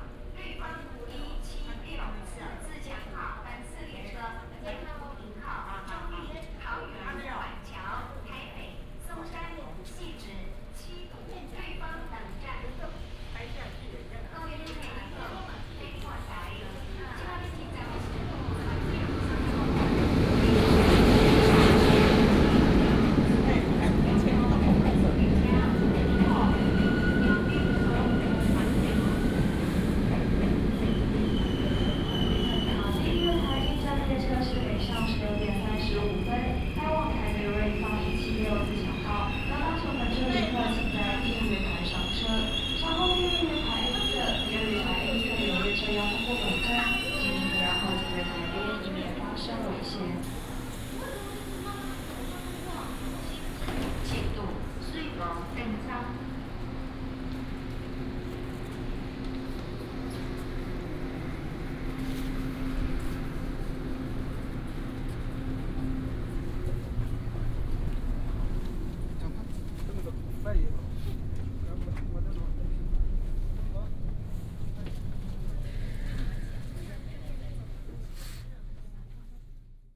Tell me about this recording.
in the station platform, Station information broadcast, The train passed